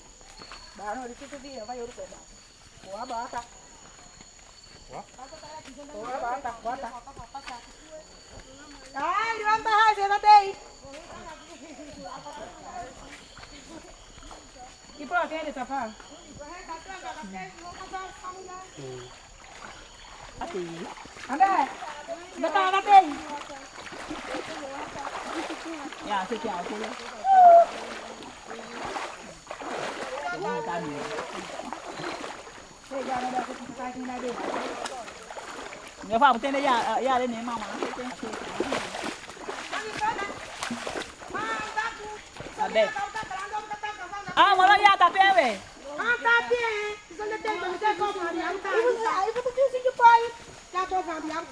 Malobbi, Suriname - women from Mailobbi walking to their fields
women from Mailobbi walking to their fields